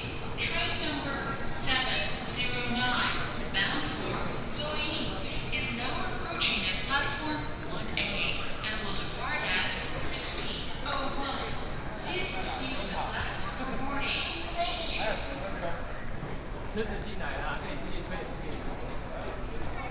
from Station hall walking to Platform, Station broadcast messages, Sony PCM D50+ Soundman OKM II
Taichung City, Wuri District, 站區一路(二樓大廳層)